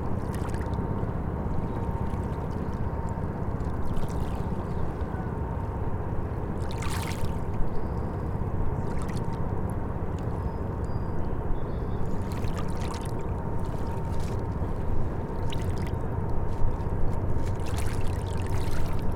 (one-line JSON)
{"title": "Lincoln Park Beach, Seattle, WA, USA - Ferry departure", "date": "2020-05-01 12:30:00", "description": "Lincoln Park Beach, ferry departure, helicopter flying overhead, leaving behind sound of waves lapping from ferry COVID-19", "latitude": "47.53", "longitude": "-122.40", "altitude": "10", "timezone": "America/Los_Angeles"}